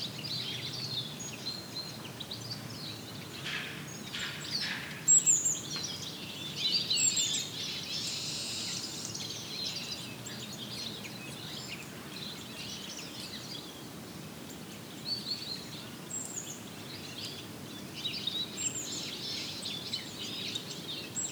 Court-St.-Étienne, Belgique - Redwing colony
A lot of city noises (cars, trains, planes, chainsaws, walkers) and behind the hurly-burly, a colony of Redwing, migratory birds, making a stop into this small pines forest.